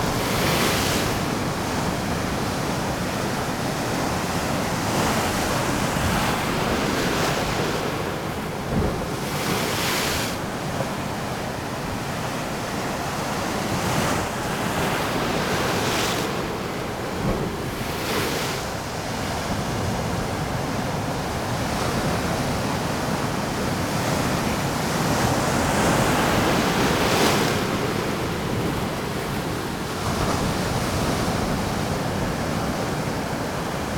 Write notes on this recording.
water crashing into rocks at the Playa de Benijo (sony d50)